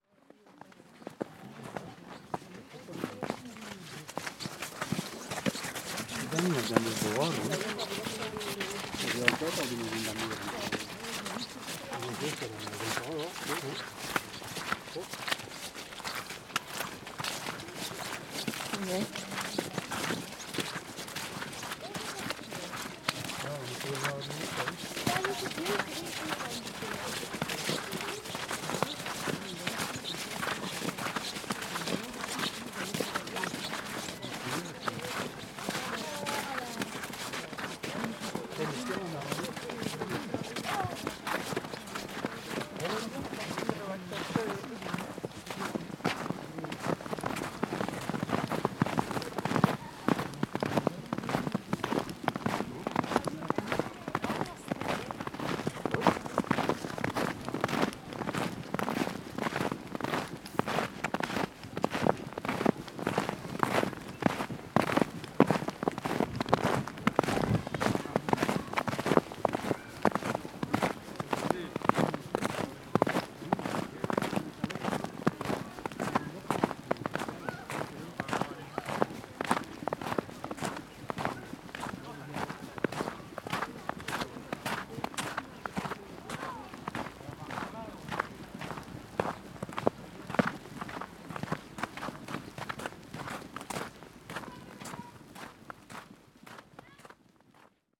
BARAQUE-MICHEL, Jalhay, Belgique - A walk in the snow
people walking, footsteps in the snow, sound of the synthetic clothes as well.
Tech Note : Sony PCM-D100 internal microphones, wide position.